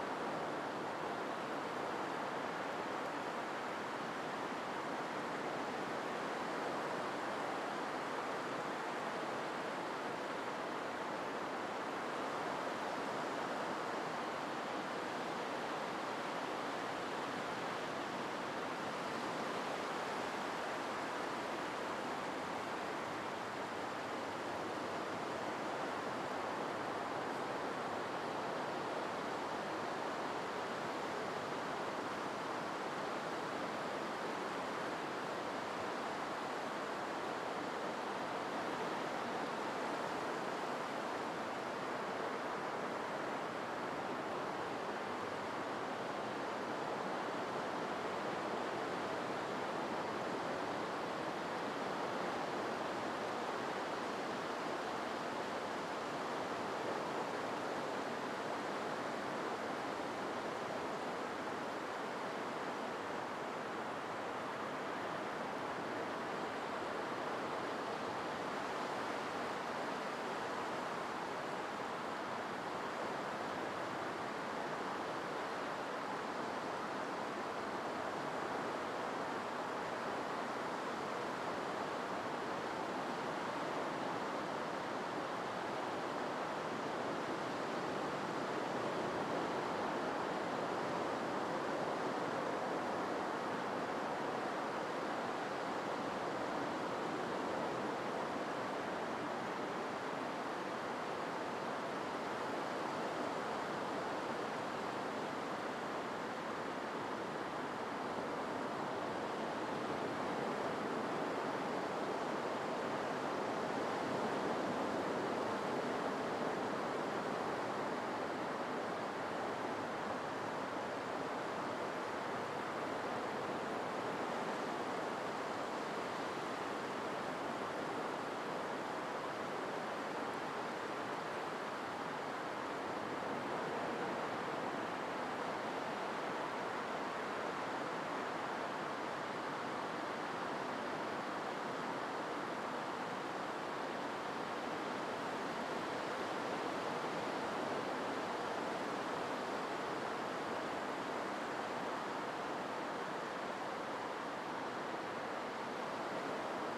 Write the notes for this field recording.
Wissant (Pas-de-Calais - Côte d'Opale), Fin d'après-midi, la mer s'est retirée. IL faut marcher un peu pour avoir les pieds dans l'eau. Les micros sont à quelques mètres des vagues. ZOOM F3 + Neumann KM 184